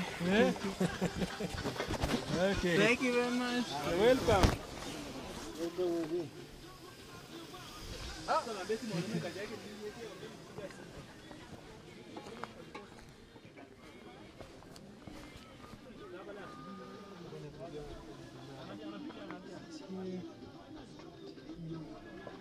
Porters on Mt Kilimanjaro cook all the food for the people who pay to climb the mountain. This recording starts outside the kitchen tent, then goes inside the tent, then leaves the tent.